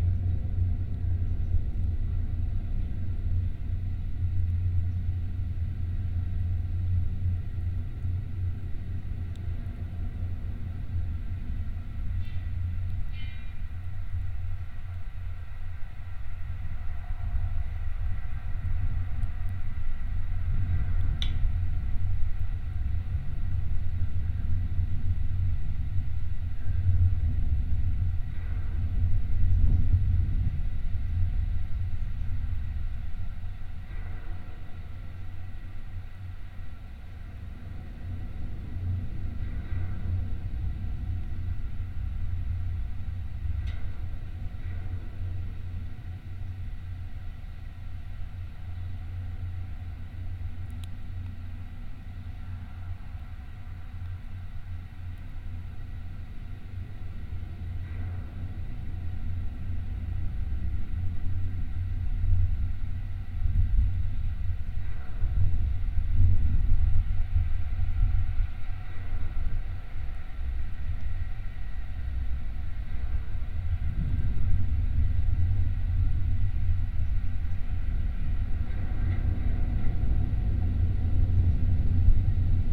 Kelmė, Lithuania, light tower
contact microphones on metallic constructions of some abandoned light tower
Šiaulių apskritis, Lietuva, 23 July, ~14:00